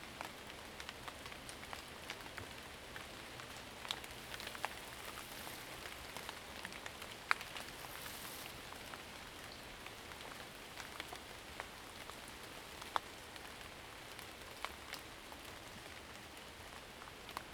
水上巷, 桃米里 Puli Township - raindrop
In the woods, raindrop
Zoom H2n MS+XY
24 March 2016, 09:47